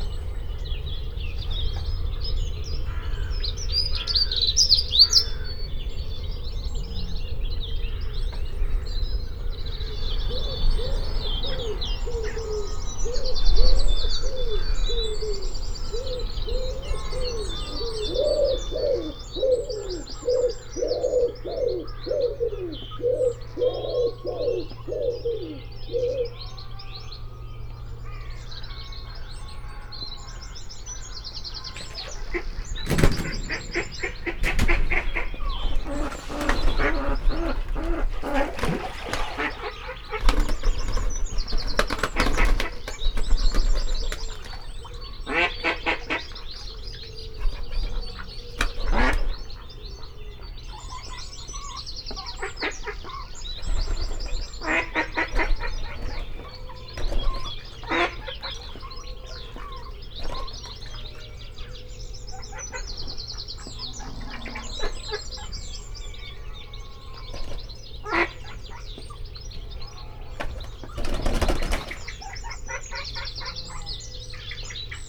My Home Place, Rifle Range Road + Avon Rd, Kidderminster, Worcestershire, UK - Worcestershire Morning
Recorded in my back garden in Malvern but dedicated to this spot. This was outside the prefab I lived in as a child and where I played in the road with my pals. 70 years ago and hardly any cars meant ball games could be enjoyed and siting on the kerb with feet in the gutter was a pleasure. Once I rode down Rifle Range Road on my bike turned right into Avon Road hit the kerb and sailed clean across the footpath into our garden fence which bounced me safely to a stop.